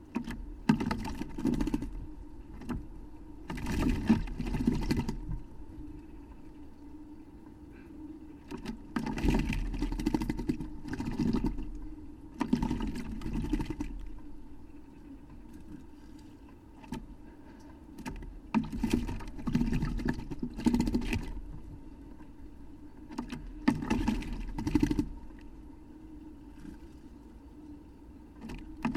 Aachen, Germany - blackbird bath
a blackbird enjoying his bath
March 2017